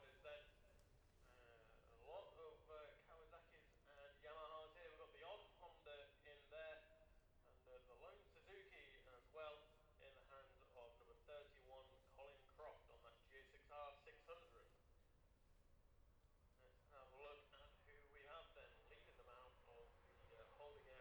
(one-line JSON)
{"title": "Jacksons Ln, Scarborough, UK - gold cup 2022 ... 600cc qualifying ...", "date": "2022-09-16 13:58:00", "description": "the steve henshaw gold cup 2022 ... 600cc qualifying group 1 and group 2 ... dpa 4060s clipped to bag to zoom f6 ...", "latitude": "54.27", "longitude": "-0.41", "altitude": "144", "timezone": "Europe/London"}